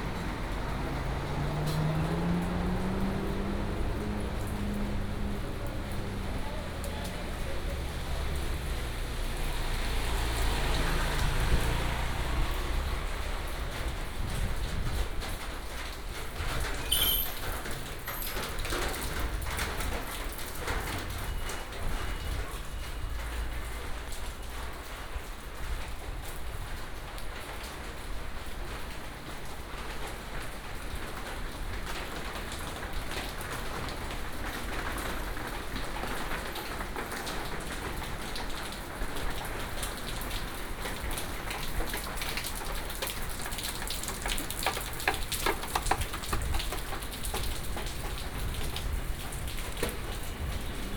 Walking in the alley, Old shopping street, Traffic sound, raindrop sound